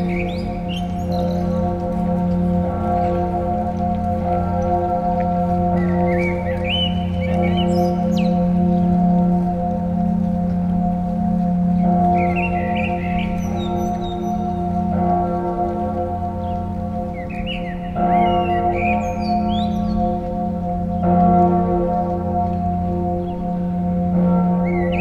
{
  "title": "Hamburg, Deutschland - Sankt Michaelis glocke",
  "date": "2019-04-19 10:00:00",
  "description": "Hauptkirche St. Michaelis. The very good and pleasant bell of this Lutheran church, ringing at 10AM. Into the park, song of a blackbird and pedestrians walking onto the gravels.",
  "latitude": "53.55",
  "longitude": "9.98",
  "altitude": "7",
  "timezone": "Europe/Berlin"
}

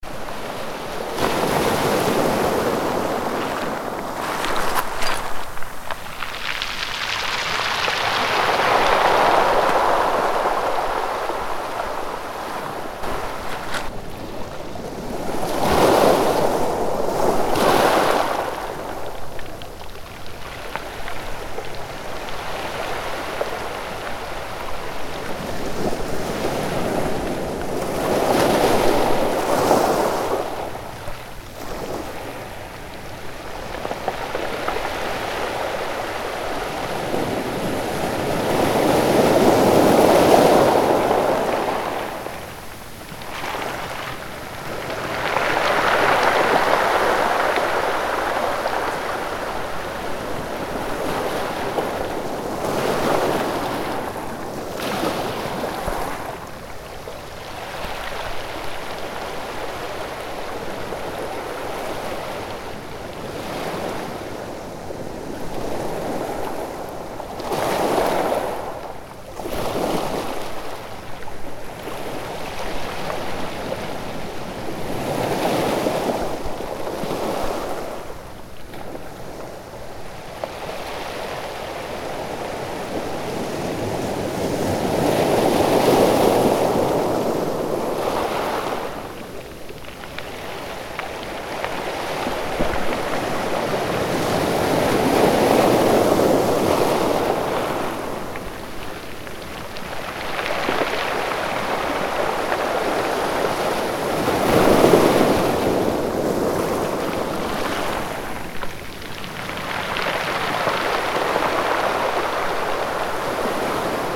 {
  "title": "Danmark - Rolling stones and waves",
  "date": "2013-05-31 15:30:00",
  "description": "Stones rolling Agains eachother because of waves from a ship",
  "latitude": "56.10",
  "longitude": "10.24",
  "altitude": "17",
  "timezone": "Europe/Copenhagen"
}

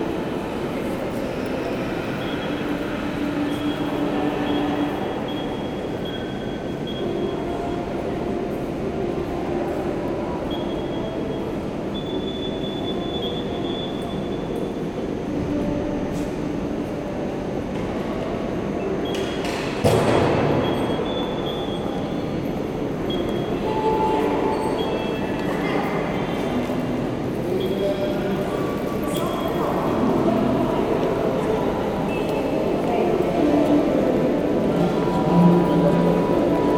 {
  "title": "Maastricht, Pays-Bas - Maastricht station",
  "date": "2018-10-20 13:45:00",
  "description": "Inside the hall of the Maastricht station. People buying tickets on automatic machines, a child trying to play piano, announcement about a train going to Randwyck and above all, a very important reverberation.",
  "latitude": "50.85",
  "longitude": "5.71",
  "altitude": "50",
  "timezone": "Europe/Amsterdam"
}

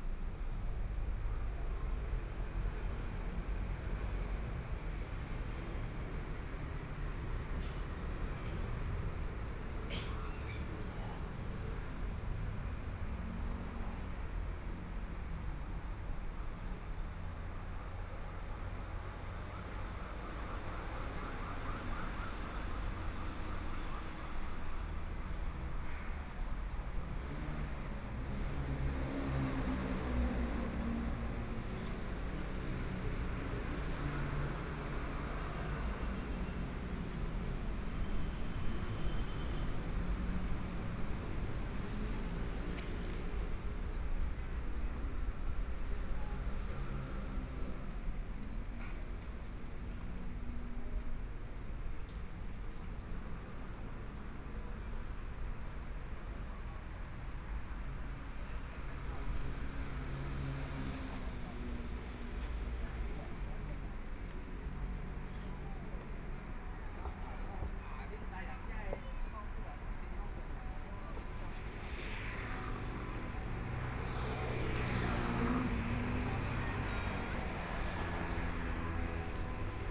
{
  "title": "Minzu E. Rd., Taipei City - walking on the Road",
  "date": "2014-02-15 14:31:00",
  "description": "walking on the Road, from Shuangcheng St. to Xinsheng N. Rd., Traffic Sound\nBinaural recordings, ( Proposal to turn up the volume )\nZoom H4n+ Soundman OKM II",
  "latitude": "25.07",
  "longitude": "121.53",
  "timezone": "Asia/Taipei"
}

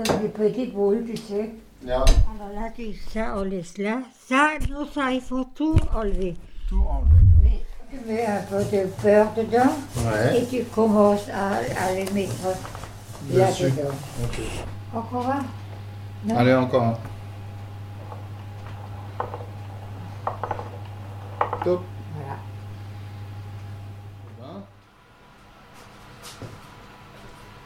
{
  "title": "Rue du Général de Gaulle, Drusenheim, France - Mamama cooking Spretz Bredele",
  "date": "2020-12-06 15:48:00",
  "description": "Mamama cooking Spretz Bredele (german christmas butter cookies) with her grand son, she teaches him how to do.\nShe died 4 days later, transmission has been done, and she's gone.",
  "latitude": "48.76",
  "longitude": "7.95",
  "altitude": "124",
  "timezone": "Europe/Paris"
}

